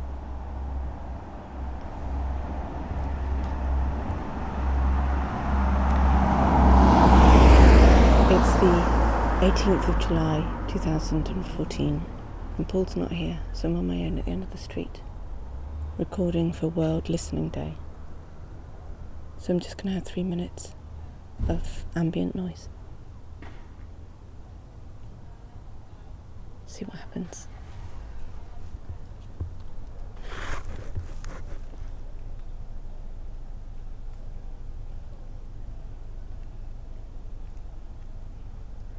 Bristol, City of Bristol, UK - Chandos Road
Standing on the corner of the street. Cars, bike, van, pedestrians. Recorded on Marantz 660 and 2 condenser mics.
21 July 2014, ~23:00